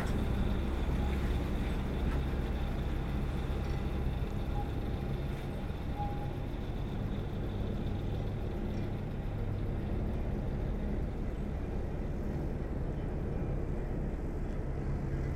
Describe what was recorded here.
A boat going out the Varennes-Sur-Seine sluice. In first, the doors opening ; after the boat is passing by on the Seine river. The boat is called Odysseus. Shipmasters are Françoise and Martial.